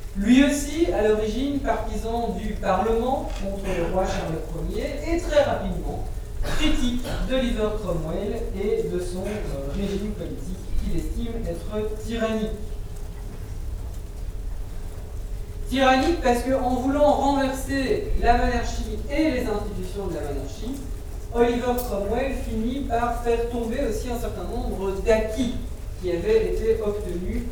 Centre, Ottignies-Louvain-la-Neuve, Belgique - A course of antic history
A course of antic history, in the Agora auditoire.
Ottignies-Louvain-la-Neuve, Belgium, 11 March 2016, ~17:00